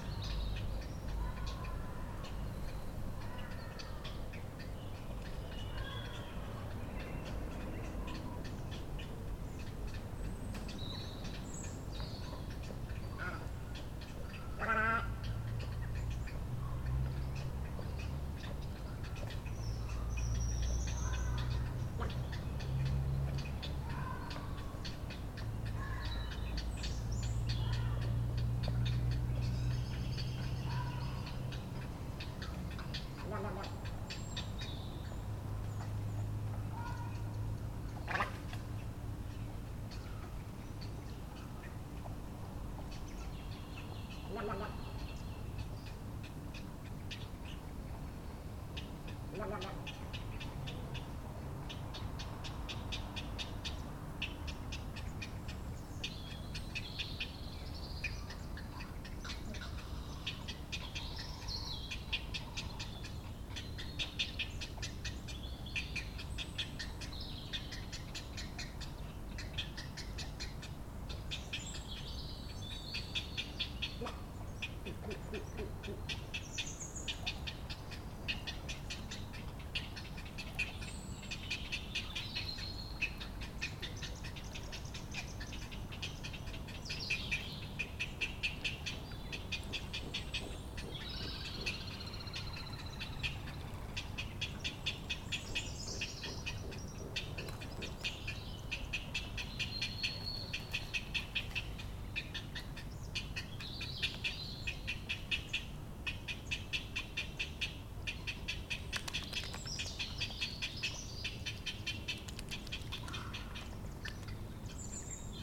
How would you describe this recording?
Little Egrets and Herons nest on the Island. The Egrets make the strange, deep, wobbling gurgling sounds. The rhythmic call is Heron chicks in the nest. Recorded with a Roland R-07.